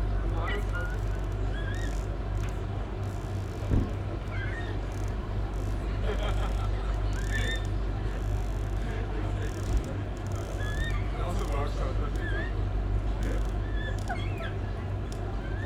Alt-Treptow, Berlin, Deutschland - restaurant boat, ambience
Sunday evening ambience at Rummelsburger See, river Spree, near a group of restaurant boats, sound of the exhaust, young coots, and other details.
(SD702, Audio Technica BP4025)